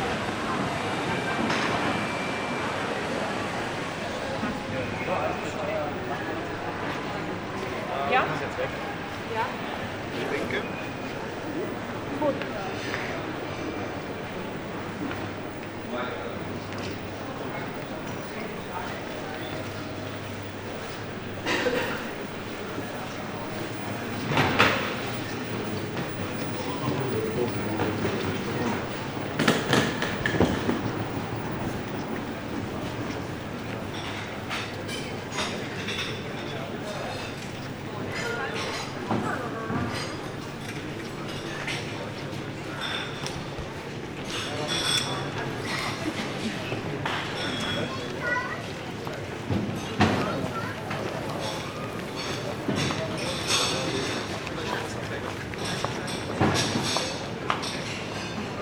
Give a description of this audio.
The walk from check-in to over-sized luggage check-in, up onto the balcony above the main arrival hall and back through a small cafe into the line for security screening.